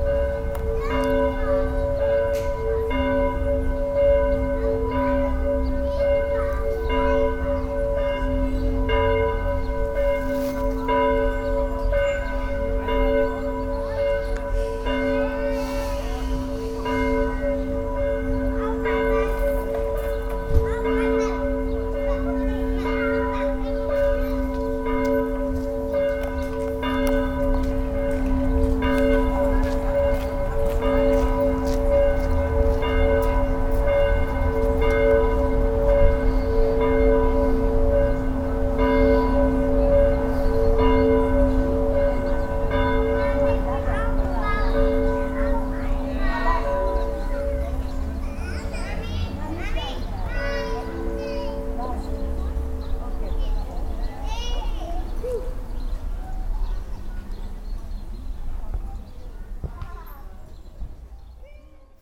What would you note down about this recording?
Standing at a children playground on a winter sunday at noon. The sounds of church bells - first from the Christophorus church nearby then followed by Nicodemus church in the distance. In the background the sounds of children playing and the city traffic. soundmap d - social ambiences and topographic field recordings